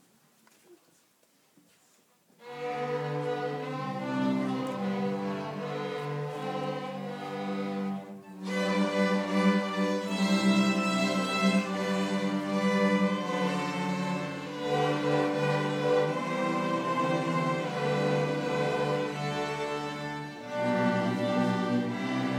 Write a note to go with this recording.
Tag der offenen Tür, 5.12.2009: Streicher AG